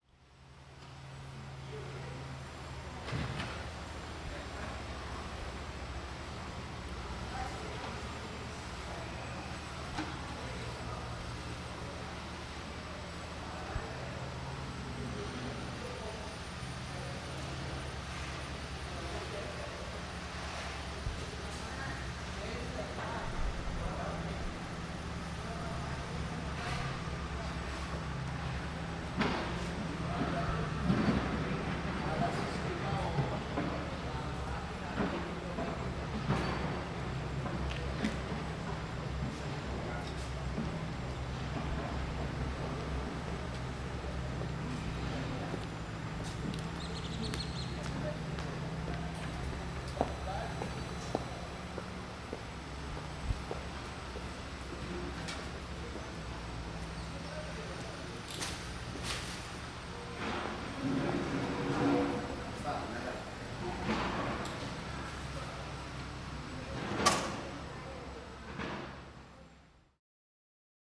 Mitropoli, Corfu, Greece - Mitropoli Square - Πλατεία Μητρόπολης
Locals are talking while street constructions are taking place near them.
Kerkira, Greece, 1 April 2019